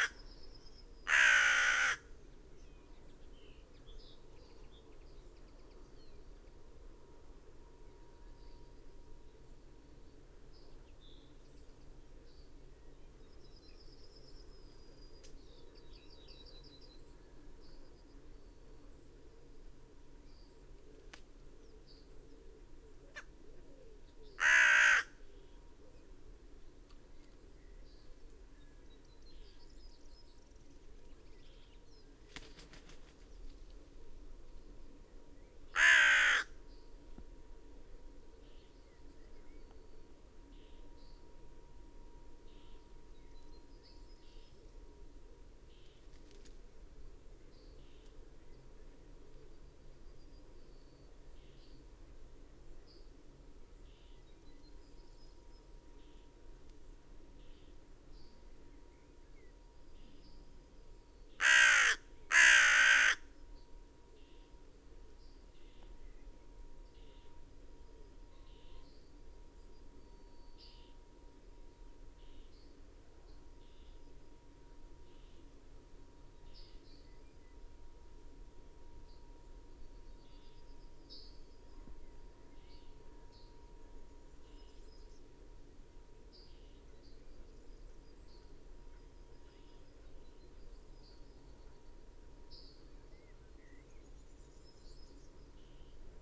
Malton, UK - temporary neighbours ...
temporary neighbours ... corn bunting and a crow ... dpa 4060s in parabolic to mixpre3 ... bird song ... calls ... from ... yellowhammer ... linnet ... wood pigeon ... blue tit ... blackbird ... pheasant ... background noise ...